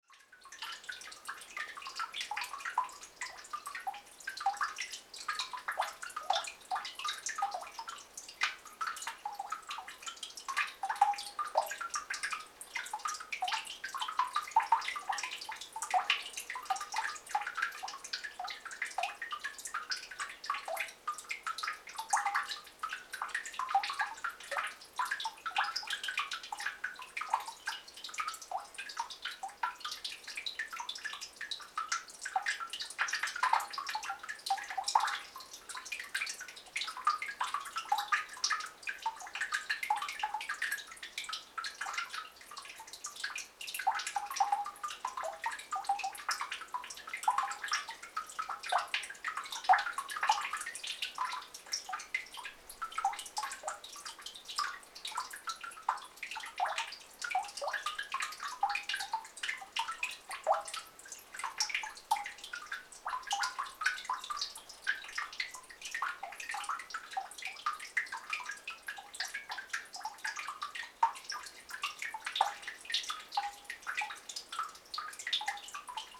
some desolate well with stench of dead beaver inside
20 January 2011, ~12:00